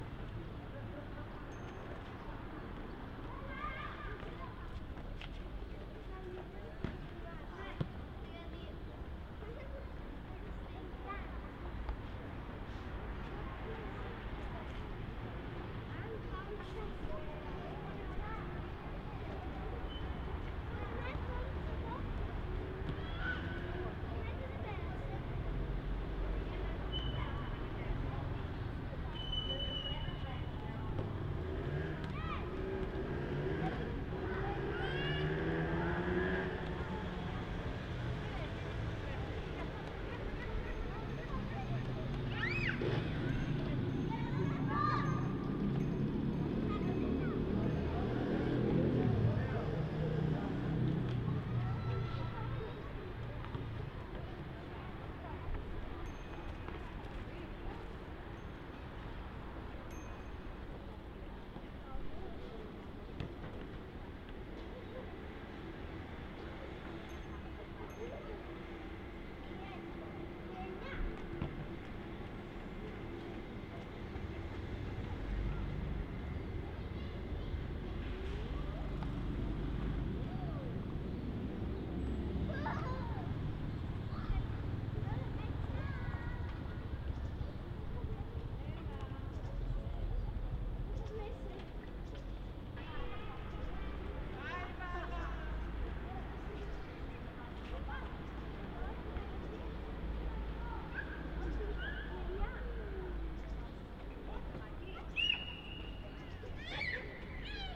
Volos, Greece - Children playing in the park
Children playing football in the park, while a little girl chases the ball screaming